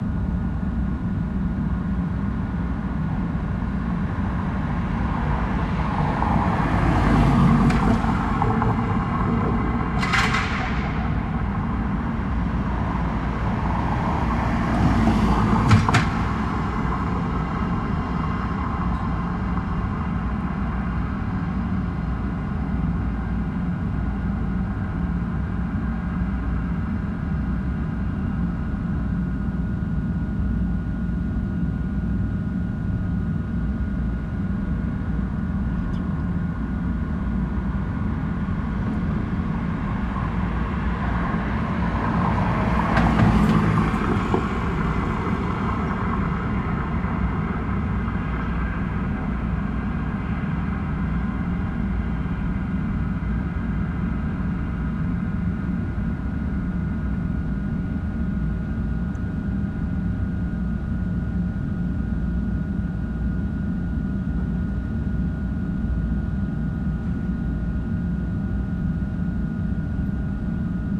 SBG, Carrer Vell - Noche
Un motor en un sótano y el tráfico atravesando el pueblo. Los coches hacen saltar a su paso las rejas del alcantarillado.